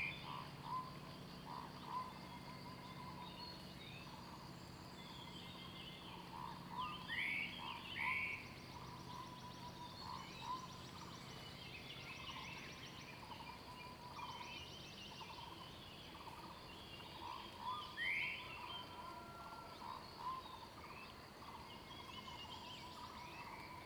Morning in the mountains, Bird sounds, Traffic Sound
Zoom H2n MS+XY
Puli Township, 水上巷, 19 April 2016